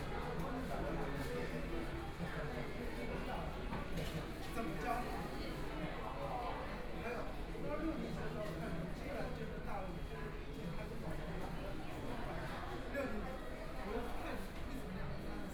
Wujiaochang, Shanghai - Fast-food restaurants
In the underground mall, Fast-food restaurants(KFC), Binaural recording, Zoom H6+ Soundman OKM II
Yangpu, Shanghai, China, 21 November 2013, 17:51